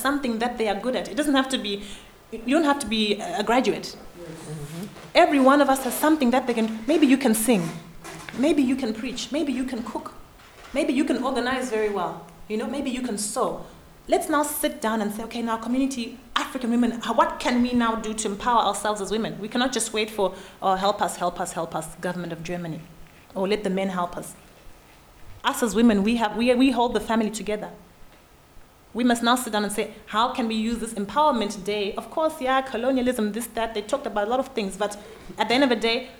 VHS, Hamm, Germany - What can we do as women here and now...
Yvonne's "Empowerment-Day" speech...
5 July 2014